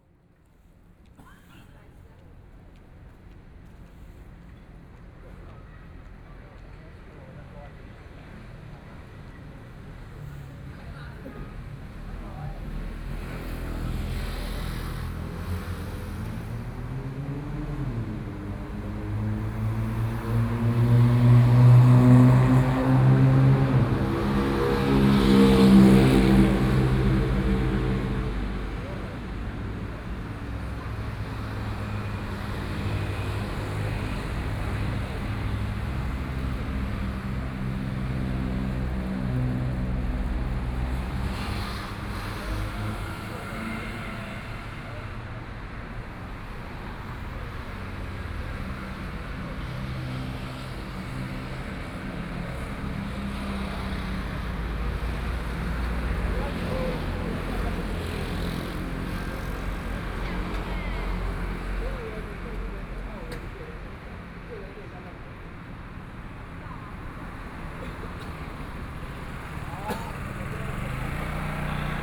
Zhongyang N. Rd., Beitou - Night traffic sounds
In front of a convenience store, Night traffic sounds, Binaural recordings, Sony PCM D50 + Soundman OKM II
2 November, 21:37